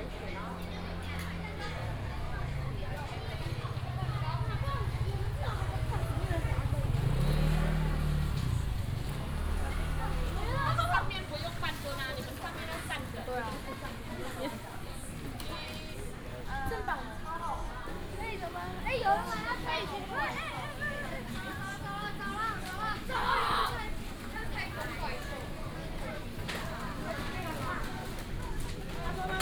Datong Rd., Hengshan Township - Sightseeing Street
Sightseeing Street, Many students and tourists
Hsinchu County, Taiwan, January 2017